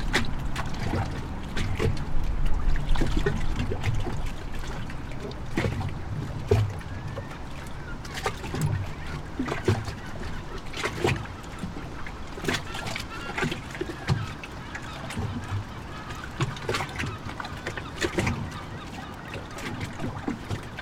Kungsholmen, Stockholm, Suecia - wooden platform
Lloc tranquil enfront del mar.
Quiet in front of the sea.
Lugar tranquilo, delante del mar.